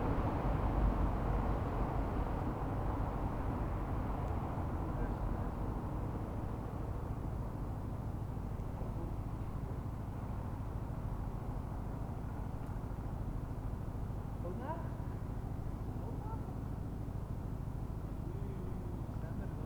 Berlin: Vermessungspunkt Friedel- / Pflügerstraße - Klangvermessung Kreuzkölln ::: 06.09.2010 ::: 00:52
Berlin, Germany, 6 September 2010, ~01:00